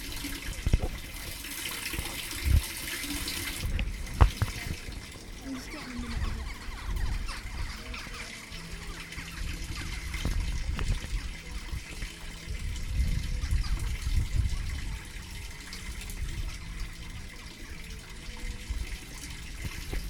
Salkeld Rd, Langwathby, Penrith, UK - Water Running through Drain, Crows in the Background
A short recording of water running through an underground drain in Langwathby after heavy rainfall. Recorded using the internal microphones of the Zoom h1. The sound of voices and crows can also be heard.
England, United Kingdom